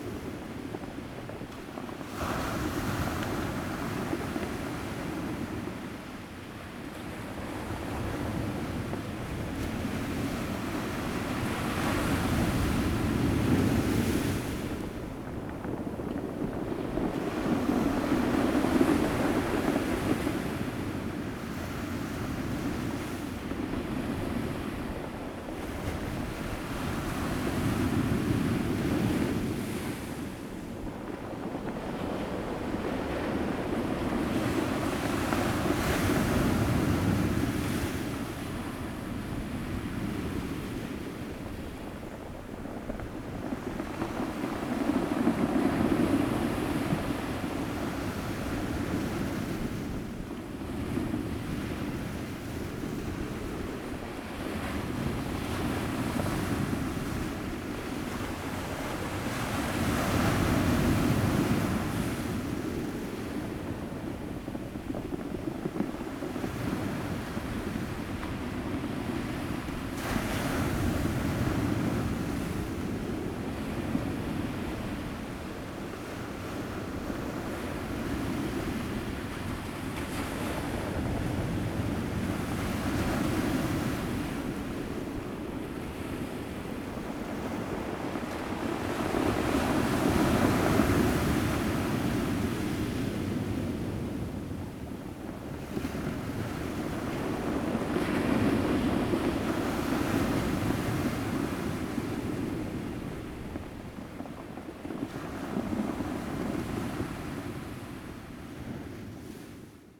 南田村, Daren Township - sound of the waves
In the circular stone shore, The weather is very hot
Zoom H2n MS +XY